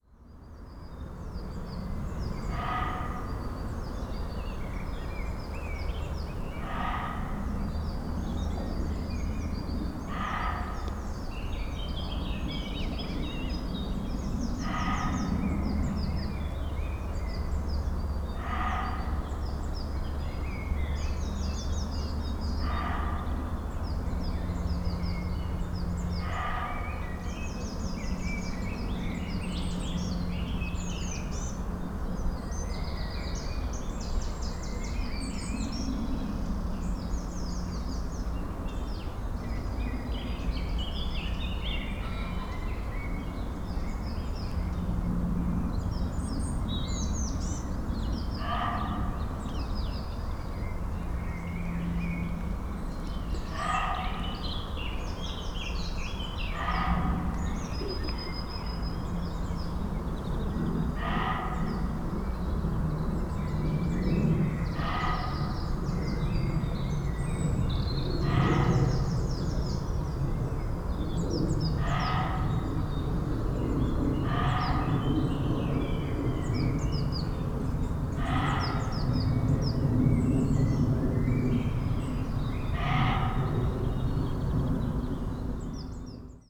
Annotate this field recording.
Trying out a new Primo EM172 boundary mic, hand held, in a local wood at 6pm on a Friday evening. A busy road, 1 Km away on the right (due South), is taking holiday makers to the coast for the weekend. The ever present aircraft are heading to and fro the three main london airports. No wonder the Muntjac deer is sounding a bit cheesed off. Pip power from an LS-10. Shortly after making the recording I was chased home by a heavy rain shower.